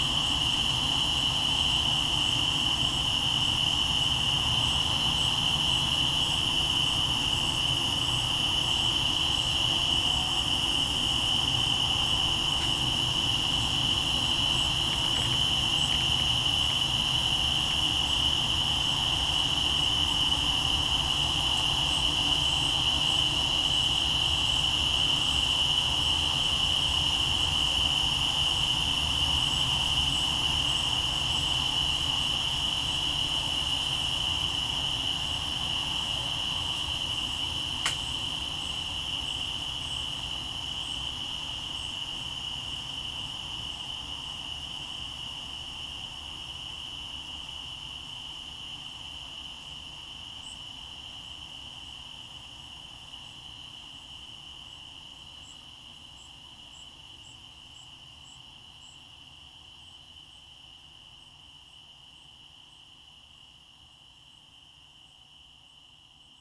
{"title": "East Austin, Austin, TX, USA - Post-Leper River Blue Moon", "date": "2015-07-31 21:24:00", "description": "Recorded onto a Marantz PMD661 with a pair of DPA 4060s.", "latitude": "30.25", "longitude": "-97.70", "altitude": "138", "timezone": "America/Chicago"}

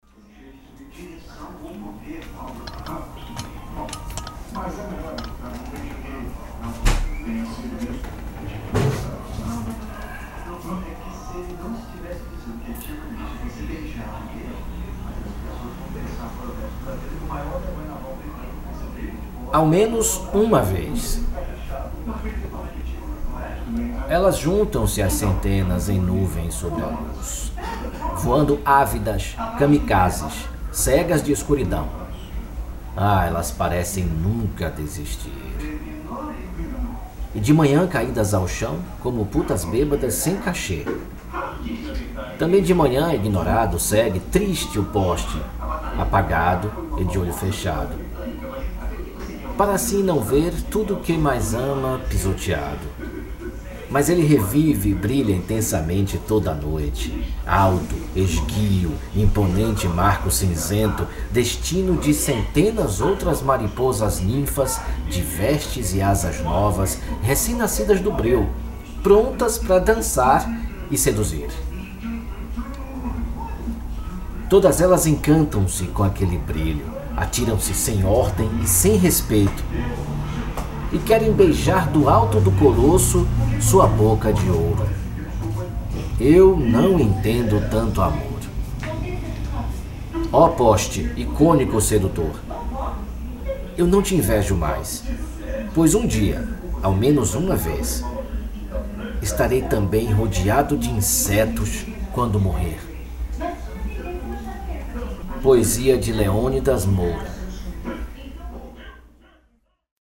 {"title": "Cruz das Almas, BA, República Federativa do Brasil - Tarde de domingo", "date": "2014-08-17 14:54:00", "description": "Gravação ambiente doméstico e poesia \"Ao menos uma vez\" de Leonidas Moura.\nMics: AKG Perception e MXL 550\nInterface Tascam US800", "latitude": "-12.67", "longitude": "-39.11", "altitude": "226", "timezone": "America/Bahia"}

